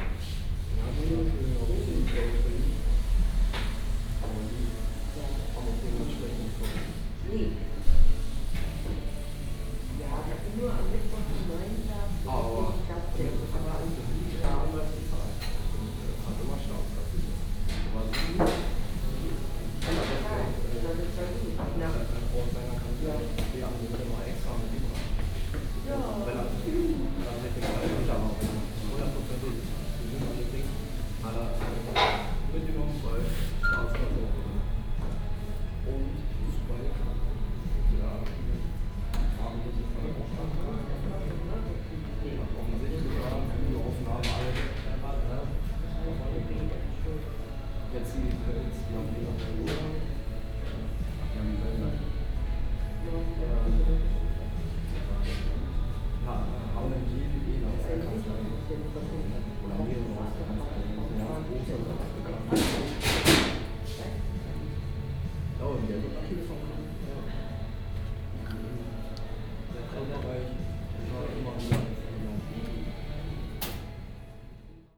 Markcafe, Templin, Deutschland - cafe ambience
Templin, Marktcafe ambience before christmas
(Sony PCM D50, OKM2)
Templin, Germany, November 2016